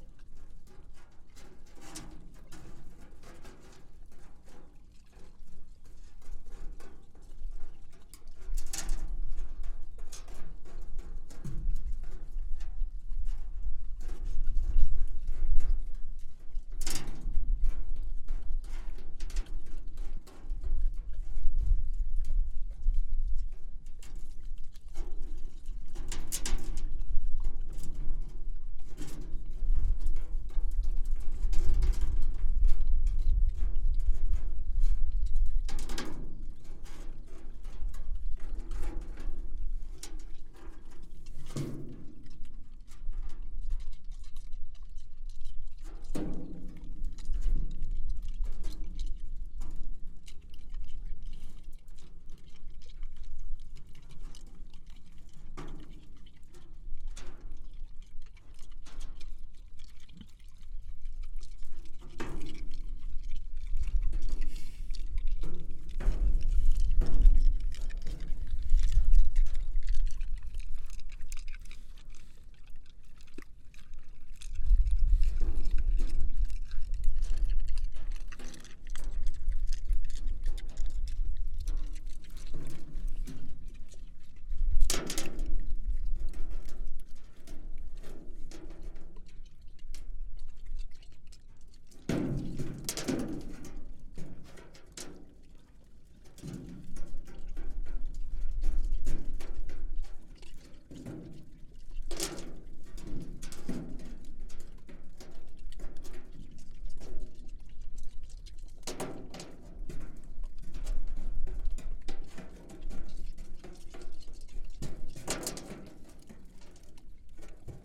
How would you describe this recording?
sows reared outdoors on an industrial scale in bare sandy soil continually, audibly chewing on stones which they drop and play with in their empty metal troughs; abnormal behaviour expressing frustration with nothing to forage, a way of managing stress and coping with a poor diet.